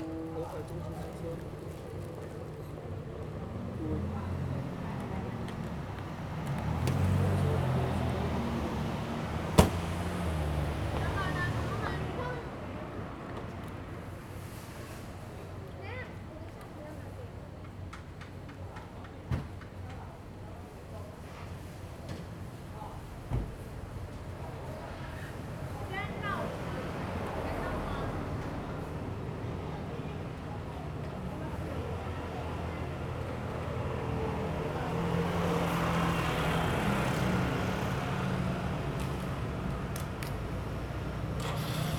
鹿野村, Luye Township - A small village in the evening
A small village in the evening, Traffic Sound
Zoom H2n MS +XY